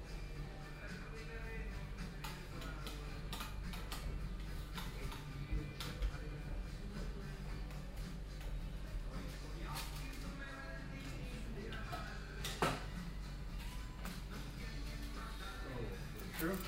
cologne, south, severinsstr, capuccino zubereitung
soundmap koeln/ nrw
capuccino zubereitung beim feinkost italiener auf der severinsstr